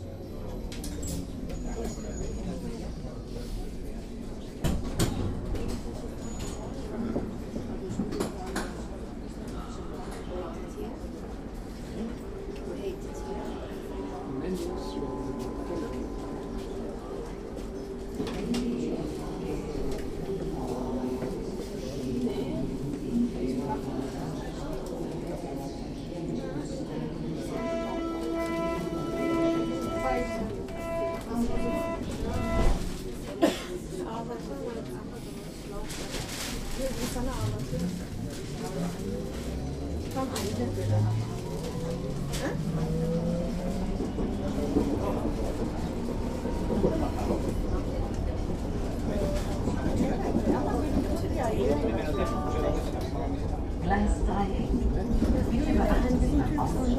Riding the U-Bahn Mendelssohn-Bartholdy-Park - Gleisdreieck
Berlin, Germany